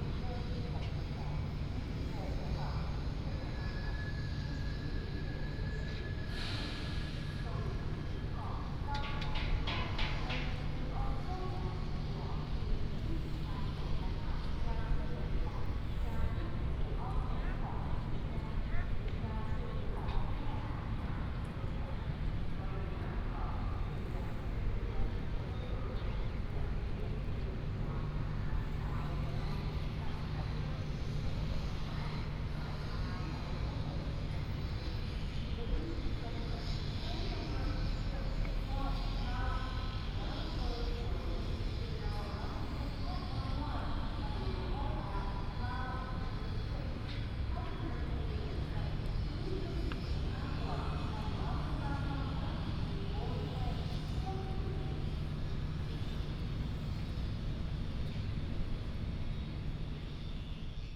THSR Hsinchu Station, Zhubei, Hsinchu County - In the square
In the square outside the station, Station Message Broadcast sound, Building the sound of construction
Zhubei City, Hsinchu County, Taiwan, 17 January 2017, ~10:00